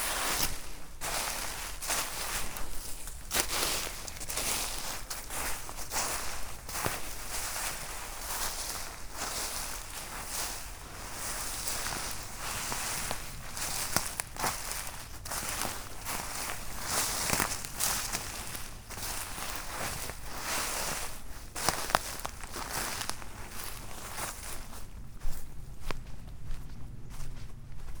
Chaumont-Gistoux, Belgique - Dead leaves
Walking in a thick mat of dead leaves in a quiet forest.
Chaumont-Gistoux, Belgium, 10 September 2016, 11:40